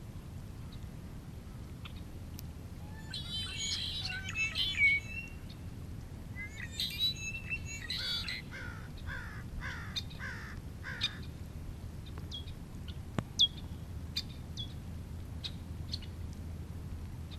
Point Reyes Station, CA, USA - red winged blackbird mating call
number of Red winged blackbird males doing there mating calls above the marshland of Tomales Bay ... In a second half of the recording you could hear a female responding
29 March